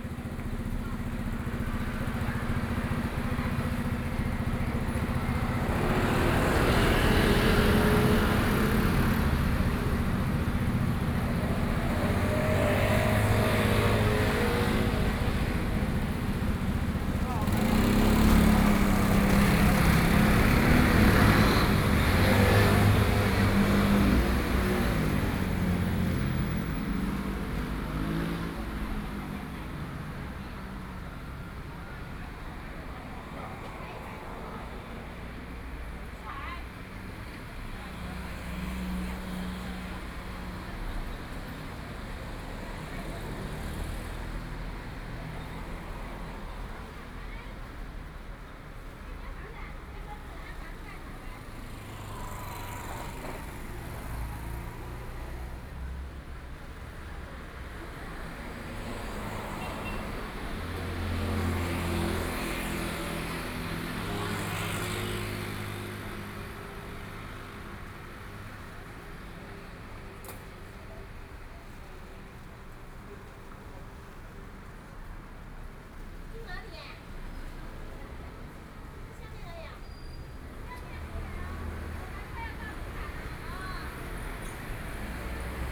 Noon, the streets of theCorner, traffic noise, Sony PCM D50+ Soundman OKM II
Taoyuan County, Taiwan, 14 August